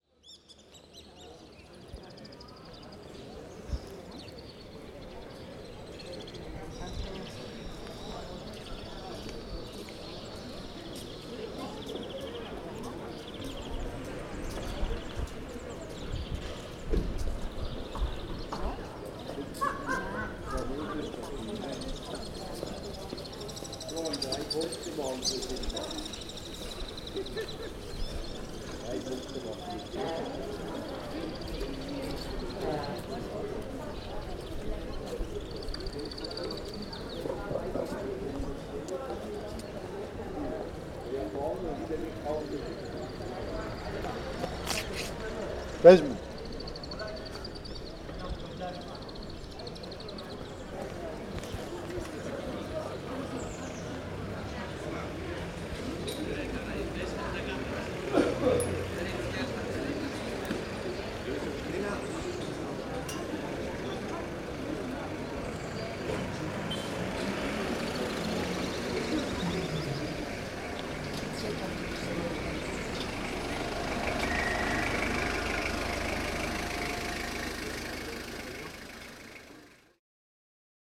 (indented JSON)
{
  "title": "Kapodistriou, Corfu, Greece - Kofineta Square - Πλατεία Κοφινέτας",
  "date": "2019-04-01 10:05:00",
  "description": "People are chatting. Cars passing through the square with supplies for the stores of the old town. The square is situated next to Agoniston Politechniou street.",
  "latitude": "39.62",
  "longitude": "19.92",
  "altitude": "15",
  "timezone": "Europe/Athens"
}